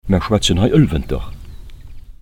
troisvierges, local dialect
This local dialect is called Oelvender - Thanks to Jang Denis for his kind demonstration.
Troisvierges, regionaler Dialekt
Der regionale Dialekt von Troisvierges, hier freundlicherweise demonstriert anhand zweier kurzer Beispiele von Jang Denis. Im Hintergrund der schnaufende Atem von einem Pferd, das nebenan in seinem Pferch steht.
Troisvierges, dialecte
Le dialecte local de Troisvierges, aimablement présenté par deux courts exemples de Jang Denis. Dans le fond, la respiration puissante d’un cheval proche dans une étable.